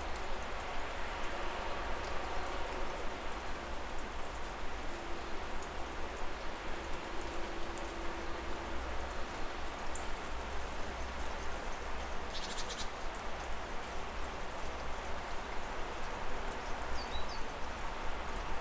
A soundscape of my neighborhood during rain. Recorded from a balcony using ZOOM H5.